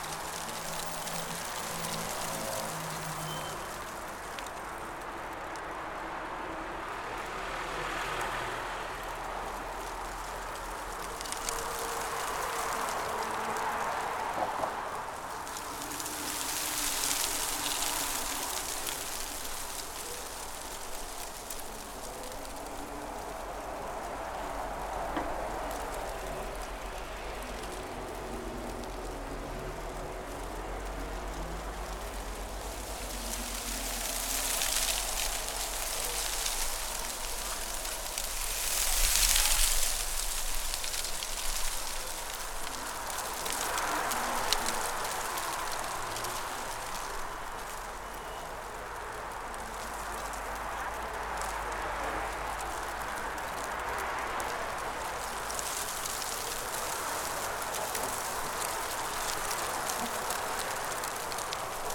Vytauto pr., Kaunas, Lithuania - Dry leaves rustling along pavement
Traffic, pedestrian footsteps, wind moving dry leaves along the pavement. Recorded with ZOOM H5.
Kauno miesto savivaldybė, Kauno apskritis, Lietuva